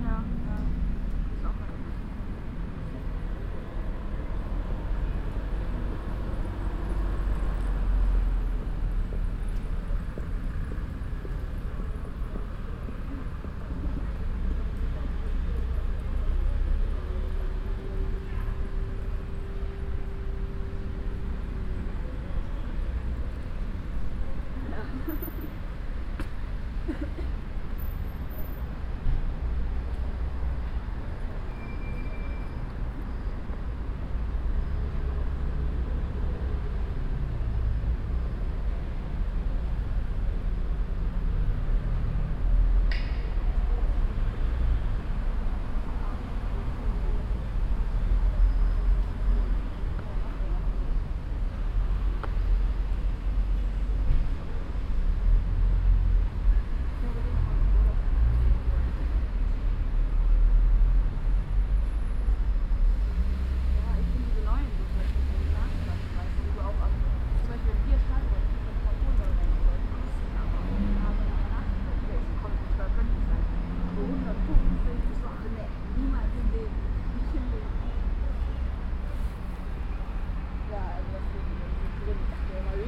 Sitting on a bench in the early afternoon. General atmosphere of the place.
Projekt - Klangpromenade Essen - topographic field recordings and social ambiences